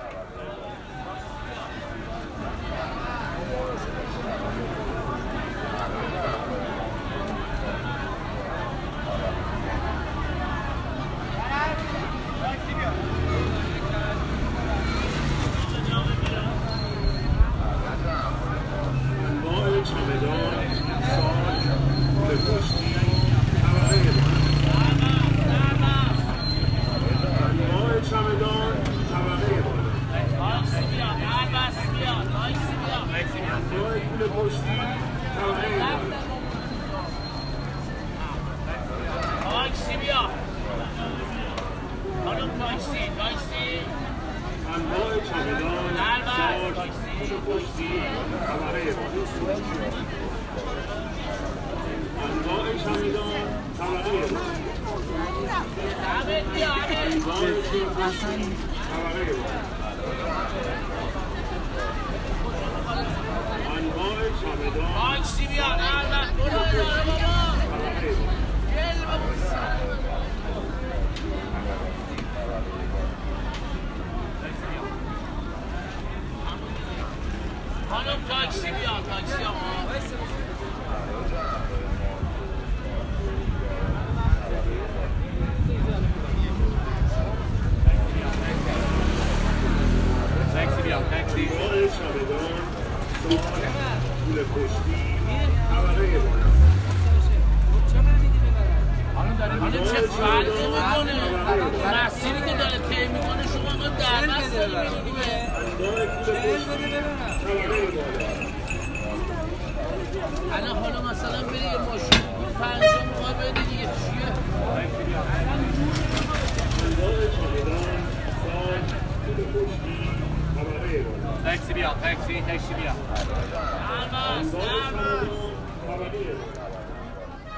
Tehran Province, Tehran, Khordad، Iran - near Grand Bazaar
busy street close to main entrance of Grand Bazaar
19 February 2019, 15:26